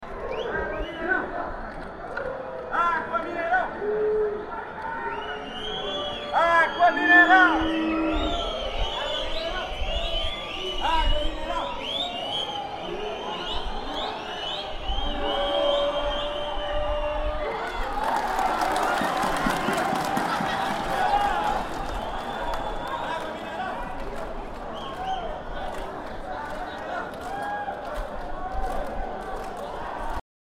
Jogo do Vila Nova pela Serie B de 2009
10 August 2009, 22:00